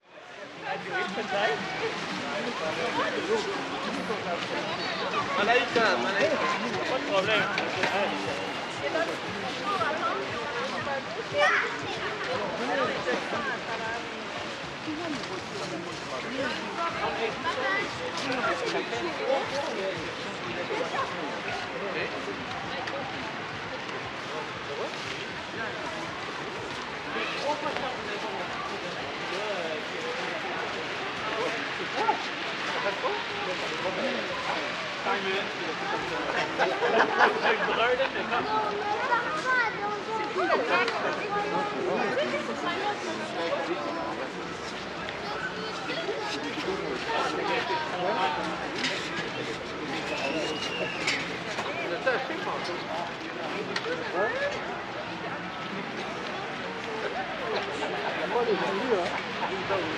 Place Jacques-Cartier, Montréal, QC, Canada - Place Jacques Cartier
Recording in front of a Maple syrup stand and a light installation, where multiple groups of people are walking through. This is a low amount of people that would usually fill up this area due to its proximity to various shops and restaurants. There was also supposed to be a small winter festival in this area.